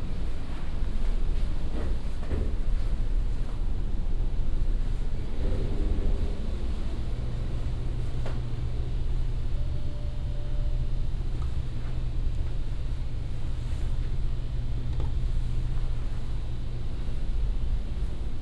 vancouver, burrard street, hotel elevator
big hotel, pretending to be fancy, hotel elevator, servants and janitors entering and exiting on different floors
soundmap international
social ambiences/ listen to the people - in & outdoor nearfield recordings